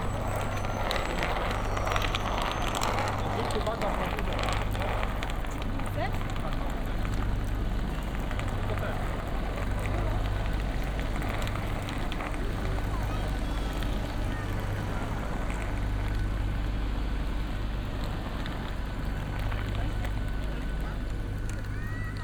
Airport Poznan - Lawica, out of the terminal - towards the plane
leaving the terminal and slowly walking towards the plane and up the stairs. passengers talking to the staff and leaving the handbags on the trolley.